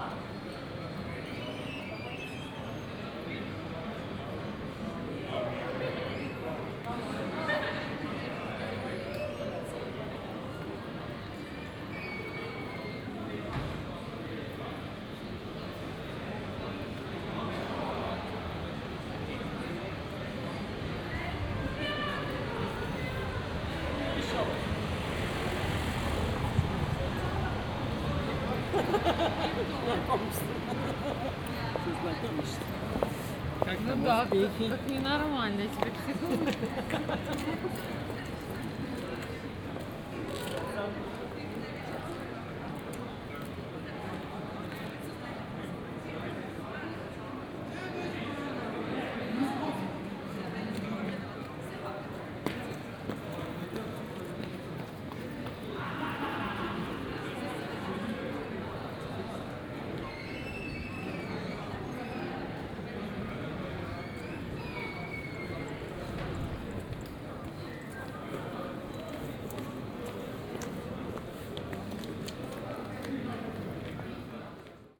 Tallinn Pikk
weekend, people gathering at a place in the old town